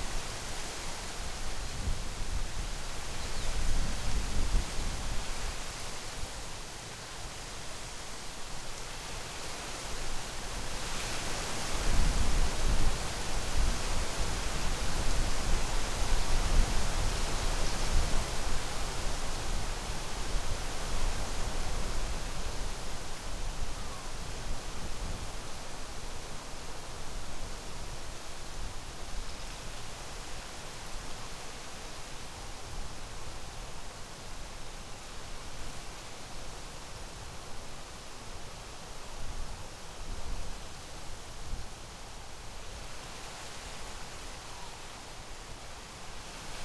Tempelhof, Berlin, Deutschland - leaves in wind
leaves in wind
(Sony PCM D50)
27 March 2016, ~14:00